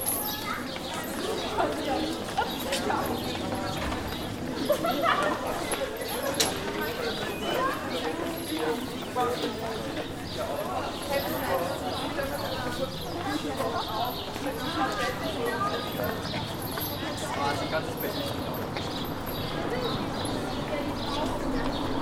paris, ecole rue tardieu
enregisté lor du tournage pigalle la nuit
France métropolitaine, European Union